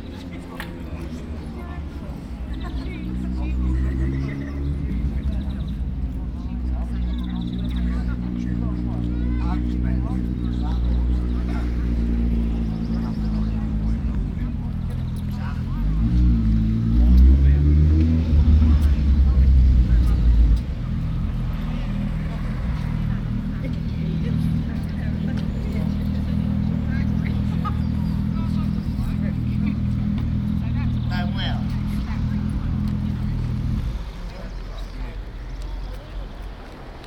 Step Short Memorial Arch, The Leas, Folkestone, Regno Unito - GG MemorialArchFolkestoneLeas-190524